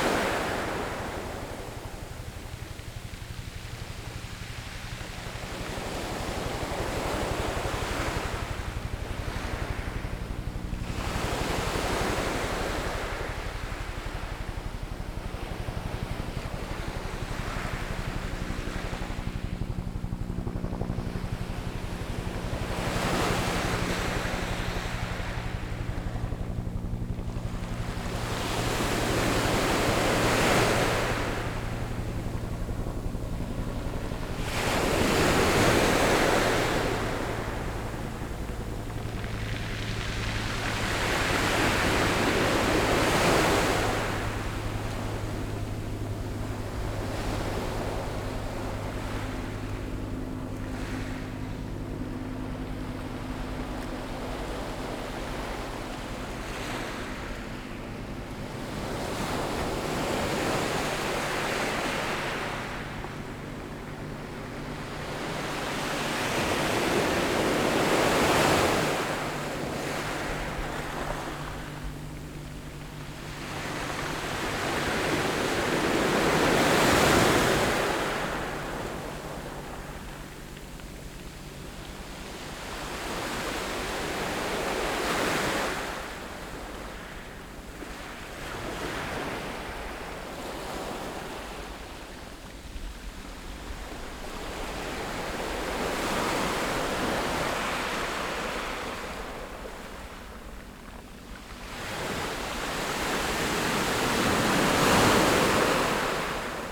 Chenggong Township, Taiwan - sound of the waves
Sound of the waves
Zoom H6 XY+NT4